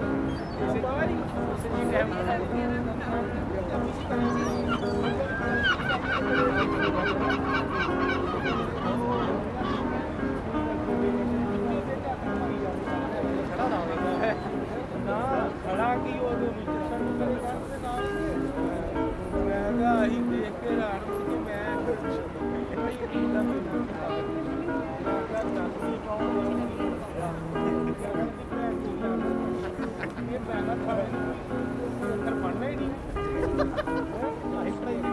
lisboa Portugal Praça do Comercio - Marco Alexandre by the river tejo, praça do comercio
sitting by the river tejo listening to the seagulls the river, a acoustic guitar player. people gather in the area to relax an catch some sun while gazing at the land scape.